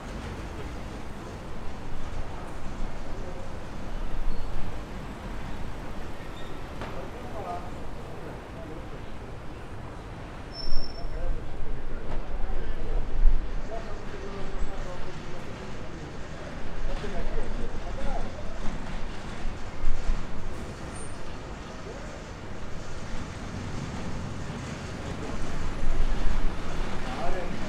נתנזון, חיפה, ישראל - Haifa
field recording in Haifa downtown
1 April 2021, 10:51